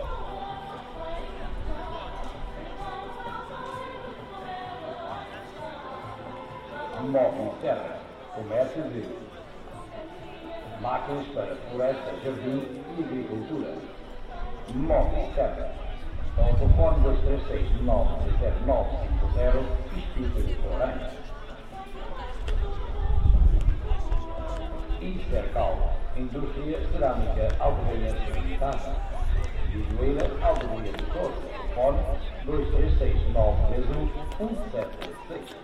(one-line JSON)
{"title": "1.Albergaria dos Doze, Leiria, Portugal. After the concert (by A.Mainenti)", "latitude": "39.91", "longitude": "-8.63", "altitude": "77", "timezone": "Europe/Berlin"}